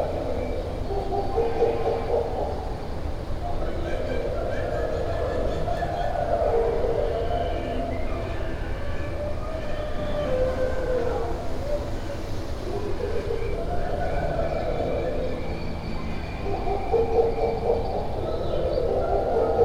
Aquapark, Kraków, Poland - (112 BI) Unexpectedly spooky sound desing
Binaural recording made from outside of the building, below the water slides. Contains an unexpectedly spooky animal sound design.
Recorded with Soundman OKM on Sony PCM D100
województwo małopolskie, Polska, 17 April, ~16:00